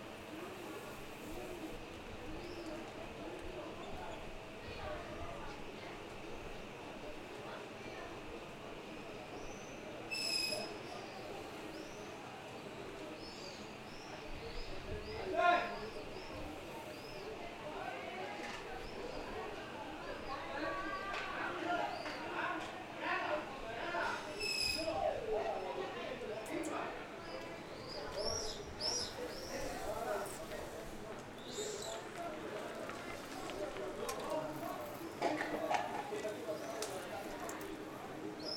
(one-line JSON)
{"title": "Asher St, Acre, Israel - Alley in Acre", "date": "2018-05-03 11:25:00", "description": "Alley, Horse, Tourist, Hebrew, Arabic, English, birds", "latitude": "32.92", "longitude": "35.07", "altitude": "9", "timezone": "Asia/Jerusalem"}